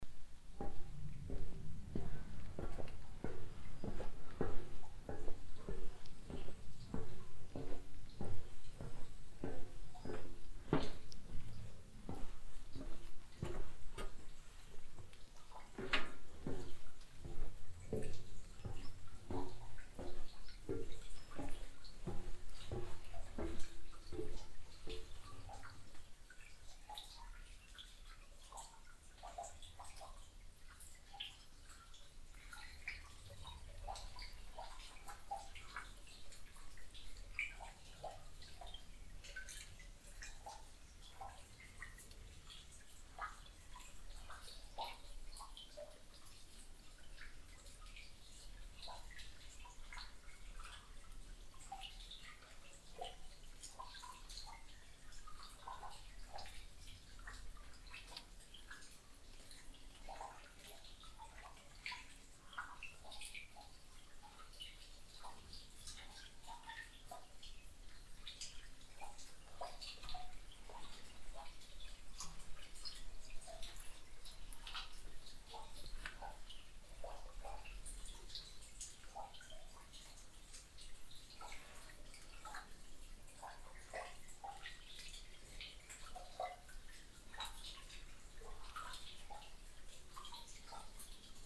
Descending a metal stairway to the entry of a drift to a former copper mine. The sound of the steps on the stairway and then the sound of dripping water that fills the floor of the entry level.
Stolzemburg, alte Kupfermine, Eingang
Weg hinab auf einer Metalltreppe zum Eingang einer alten Kupfermine. Das Geräusch der Schritte auf den Stufen und dann von tropfendem Wasser, das den Boden am Eingangsniveau füllt.
Stolzembourg, ancienne mine de cuivre, entrée
Descente d’un escalier métallique vers l’entrée d’une galerie de l’ancienne mine de cuivre. Le bruit des pas sur les marches puis le bruit de l’eau qui coule et s’accumule sur le sol au niveau de l’entrée.